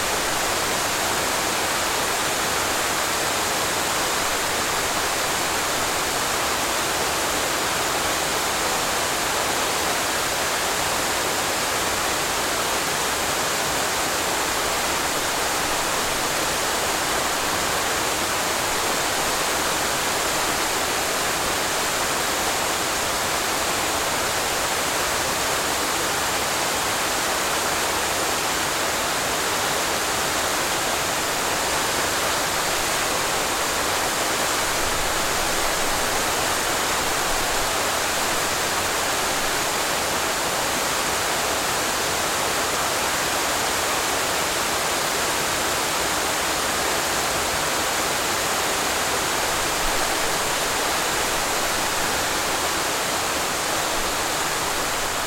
Garrison, NY, USA - 5 feet away from a waterfall
Natural white noise. 5 feet away from a waterfall.